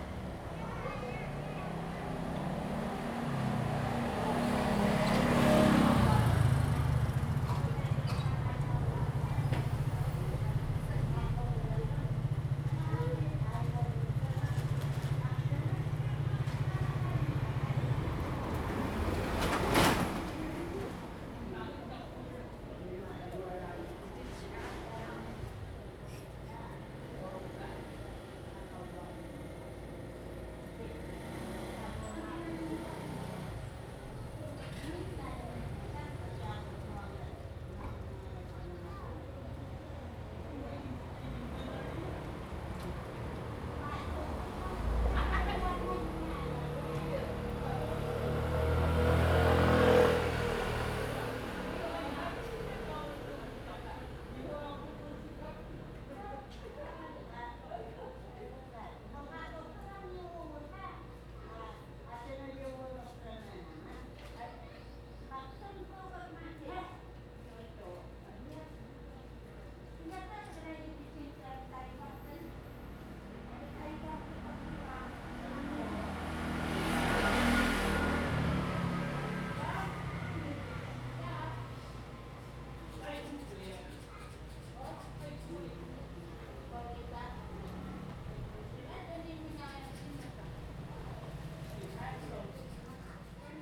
紅頭村, Ponso no Tao - A group of elderly chat
Small village, Next to the bus stop, A group of elderly chat dialogue, Traffic Sound
Zoom H2n MS +XY